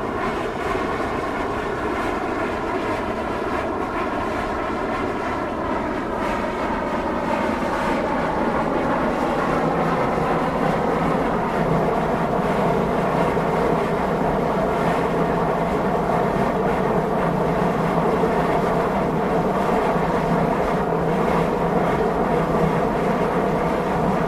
Most, Česká republika - Air system for the new Lake Most
Air system for the new Lake Most